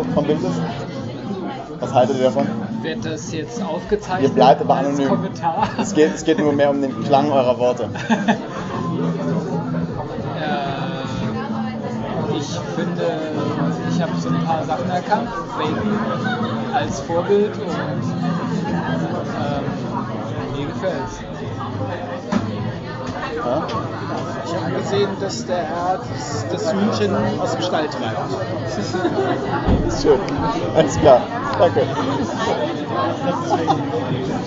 Ein Bild macht durch, Der Kanal, Weisestr. - Ein Bild macht reden, Der Kanal, Weisestr. 59
Samstag Abend. Nach 24 Stunden hängt das Triptychon. Es dringt von der Wand durch das Schaufenster auf die Straße. Die Gäste kommen. Das Bild macht reden.